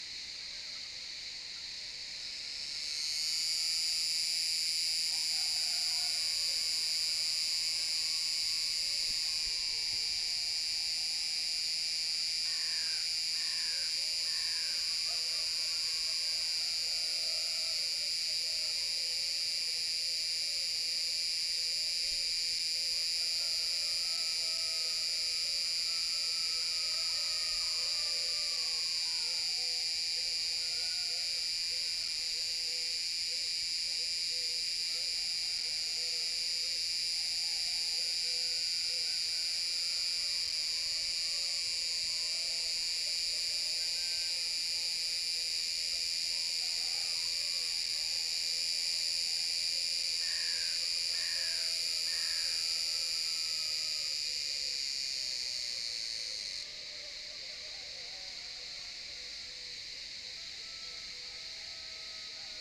Ulupınar Mahallesi, Unnamed Road, Kemer/Antalya, Turkey - Cicada
A cicada singing with a beginning and an end, daytime
July 2017